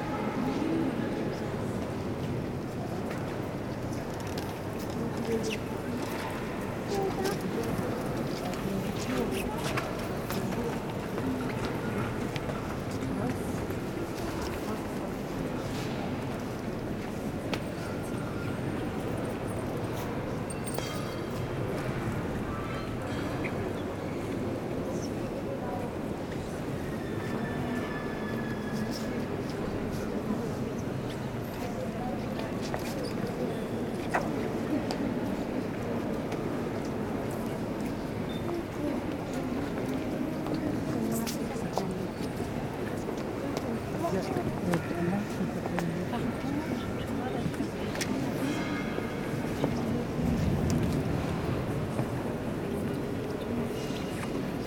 Chartres, France - The cathedral
A quiet day inside the Chartres cathedral.
Cloi Notre Dame, Chartres, France